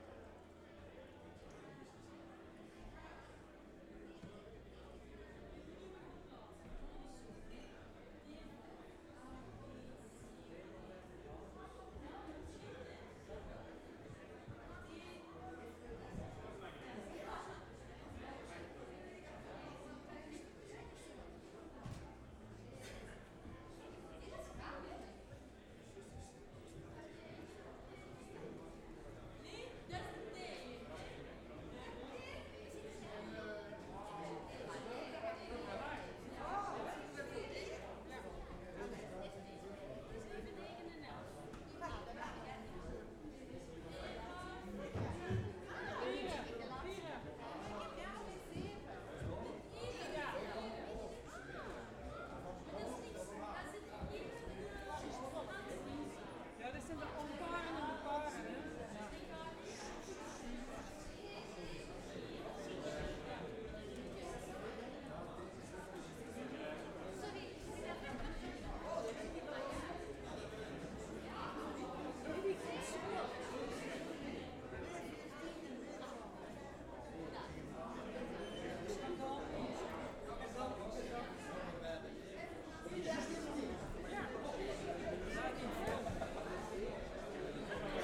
Antwerpen, België - entrance
public entering theatre
recording stops where the performance starts
Antwerpen, Belgium